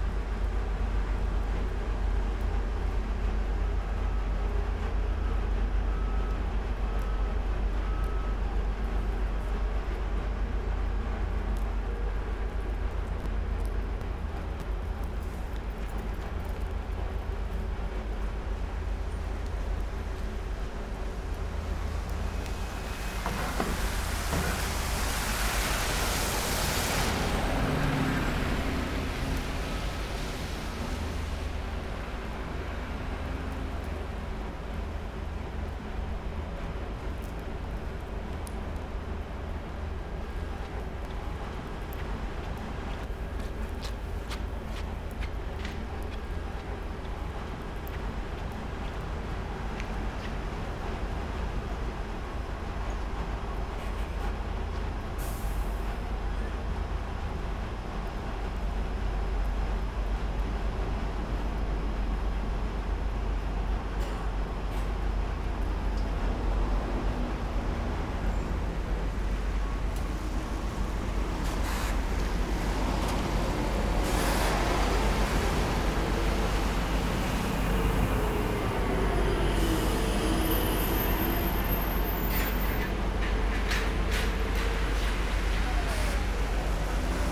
Vaise, Lyon 9e arrondissement .Un passage près d'une gare, une énorme ventilation, des bus...